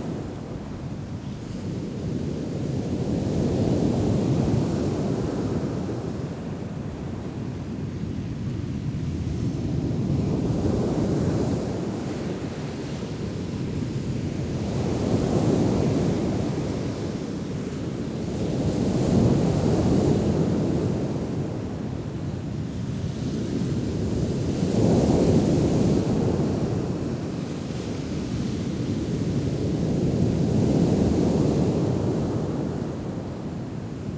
Paraporti beach on a very windy July day, creating a high surf crashing onto the beach. Recording made using a DPA4060 pair to a Tascam HDP1.
1 July 2019, 18:00